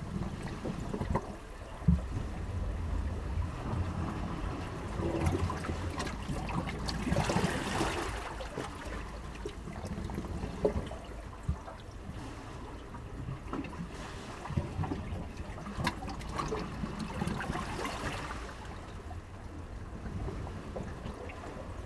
East Pier, Whitby, UK - Breakwater cistern ...
Breakwater cistern ... East Pier Whitby ... open lavalier mics clipped to sandwich box ... small pool between boulders filling up and emptying with a different rhythm to the tide ...